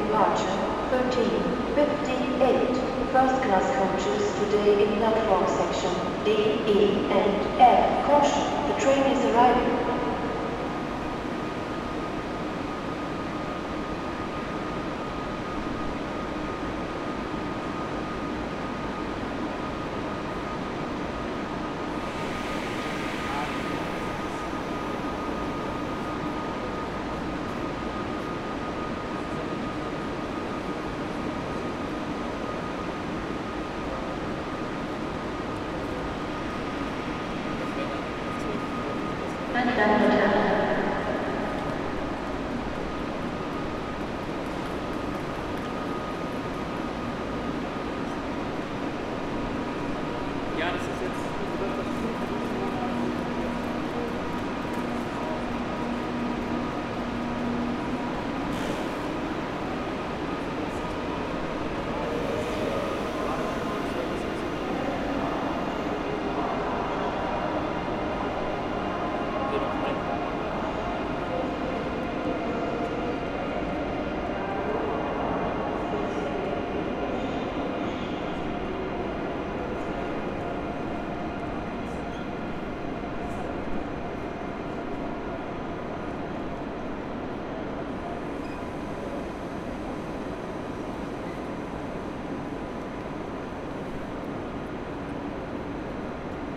Frankfurt (Main) Hauptbahnhof, Gleis - 3. April 2020, Gleis 9
The recording starts with a walk through a tunnel that connects the platforms. A man whistles to signal that I should move aside for the proper physical distance. He stresses his whistle with a gesture. On platform 9 a train is leaving. Nearly noone boarded. A train to Kiel is announced that I took since November several times at that day. I never made a recording. But the train was always packed. A lot of people were leaving, even more boarding. Today I saw perhaps ten people leaving the train, 15 people boarding, all rather young. The doors of the train are beeping as if this could help to get customers. An anouncement is made that people should take a certain distance to each other. The train to Kiel is leaving with a short delay. The sound of the engine is quite different from older ICEs. A walk through the main hall to a book shop marks the end of this recording.